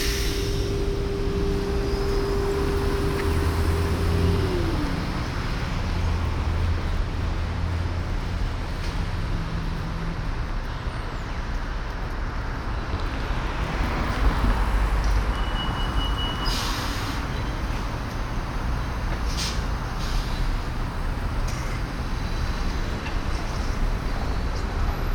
vancouver, granville street corner davie street, traffic
traffic downtown in the early afternoon
soundmap international
social ambiences/ listen to the people - in & outdoor nearfield recordings